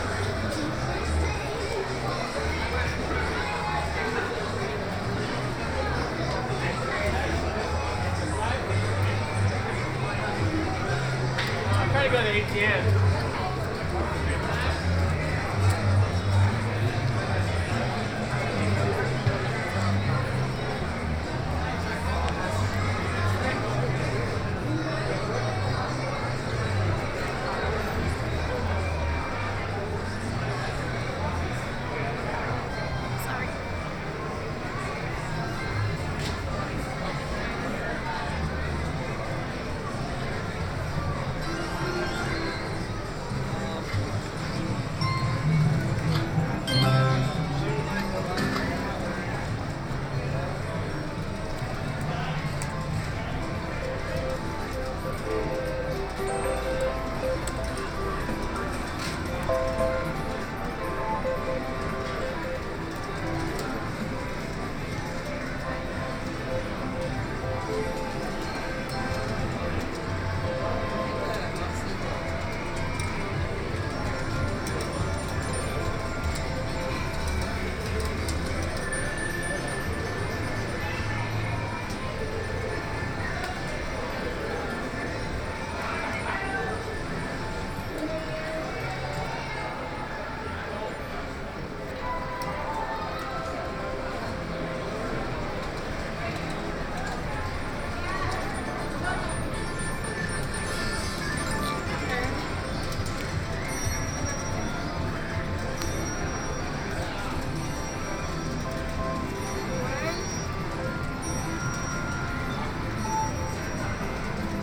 Walking with binaural mics in a casino in Las Vegas
NV, USA, 5 July